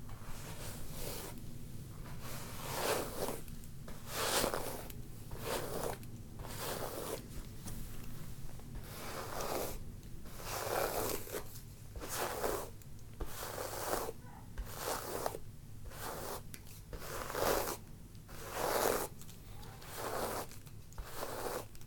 Recorded with a pair of DPA 4060s and a Marantz PMD661